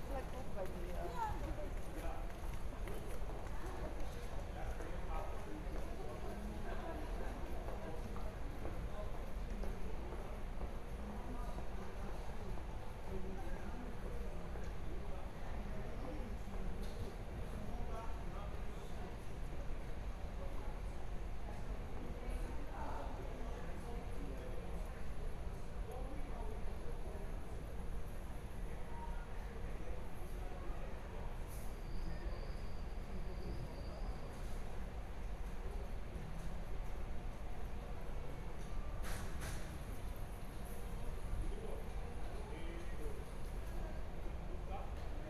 {"title": "Vertrekpassage, Schiphol, Nederland - Inside The Schiphol departure lounge during Corona lockdown", "date": "2020-06-25 09:30:00", "description": "Recording has been made inside the Schiphol departure lounge number 2. Minimal traffic due to the Corona Lockdown.\nRecorder used is a Tascam DR100-MKlll. Recorder was left for about 10 minutes on a servicedesk.", "latitude": "52.31", "longitude": "4.76", "altitude": "8", "timezone": "Europe/Amsterdam"}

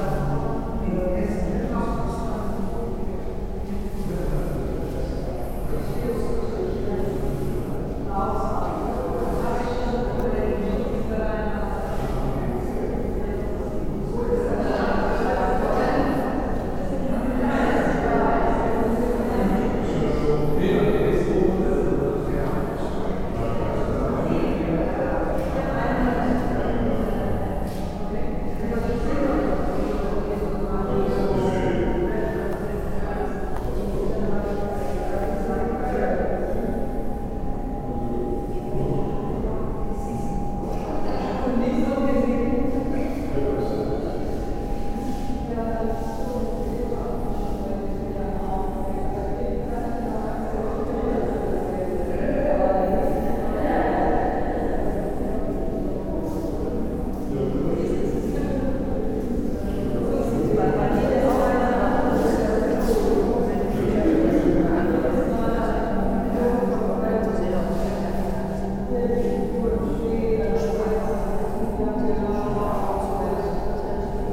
{"title": "museum castle moyland, exhibition hall", "date": "2011-11-04 15:53:00", "description": "Inside an exhibition hall of the museum moyland - here presenting young contemporary female artists. The sound of visitor conversations and a video installation in the reverbing hall.\nsoundmap d - topographic field recordings, art places and social ambiences", "latitude": "51.76", "longitude": "6.24", "altitude": "18", "timezone": "Europe/Berlin"}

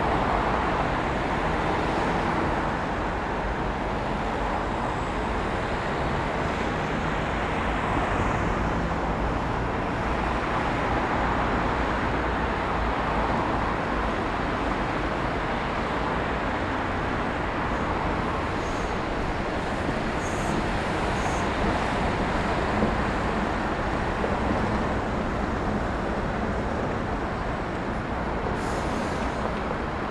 {"title": "Żołnierska, Olsztyn, Poland - Obserwatorium - Zachód", "date": "2014-06-04 16:47:00", "description": "Recorded during audio art workshops \"Ucho Miasto\" (\"Ear City\"):", "latitude": "53.77", "longitude": "20.49", "altitude": "141", "timezone": "Europe/Warsaw"}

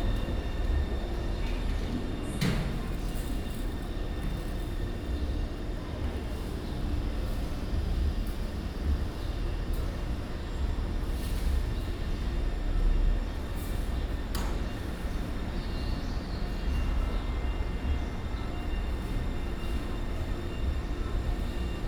{"title": "Tamsui Station, New Taipei City - In MRT station platform", "date": "2015-07-21 06:15:00", "description": "In MRT station platform, In MRT compartment", "latitude": "25.17", "longitude": "121.45", "altitude": "10", "timezone": "Asia/Taipei"}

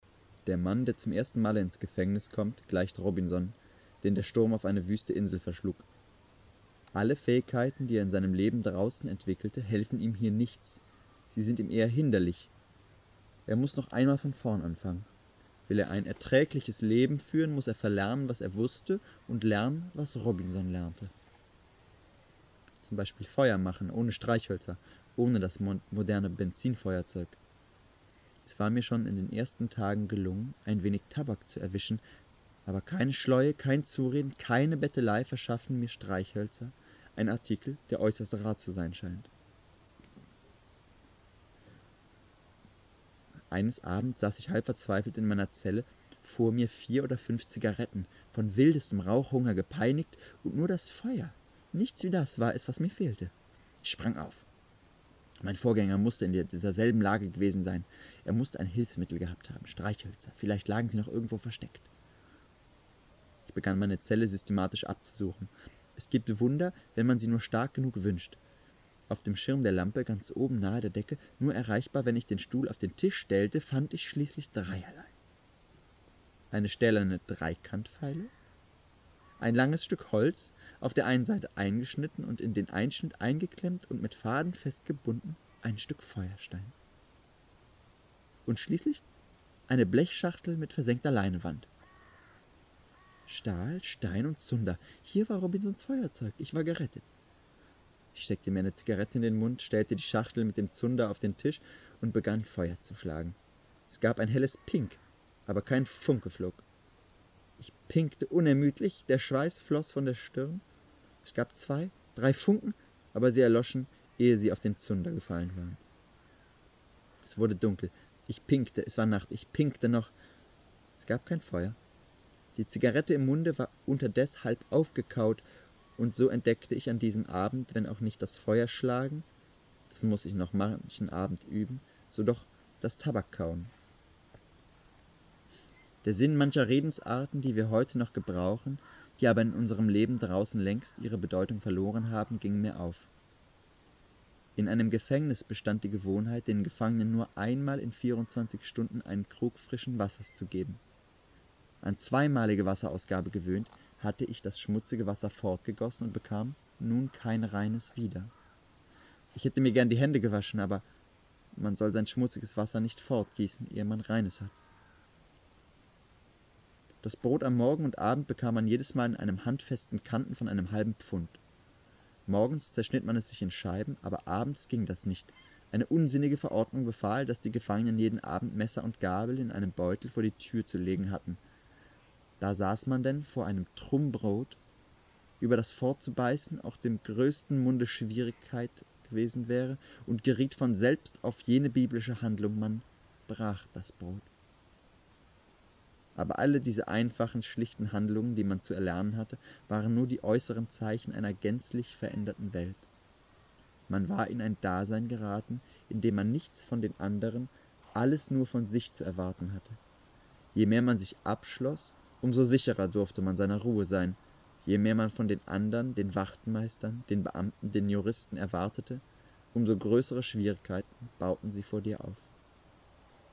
{"date": "2010-09-01 20:01:00", "description": "Aus der Erzählung Drei Jahre kein Mensch von Hans Fallada, der hier in Carwitz seine löchriges Leben flickte.", "latitude": "53.29", "longitude": "13.44", "timezone": "Europe/Berlin"}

